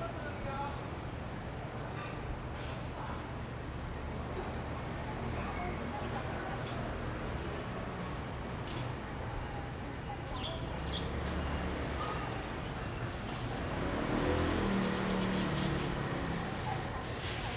Ln., Wuhua St., Sanchong Dist., New Taipei City - In the alley
In the alley
Rode NT4+Zoom H4n